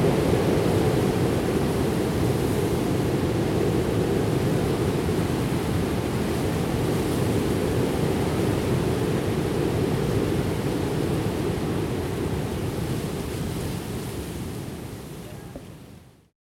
Laval, QC, Canada - On a cold windy afternoon ...
Parc Olivier-Charbonneau.
Spring is slow to appear this year... Sunday afternoon, it's cold and windy ...
Zoom H2N, 4 channels mode. The mic is in the bush !